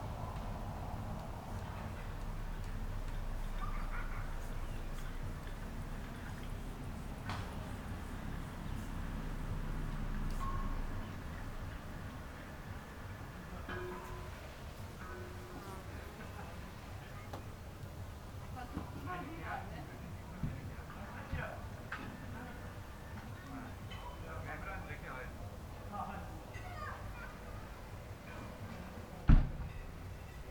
Kirchmöser Ost, at the garden, late summer, rural afternoon ambience, neighbours at work
(Sony PCM D50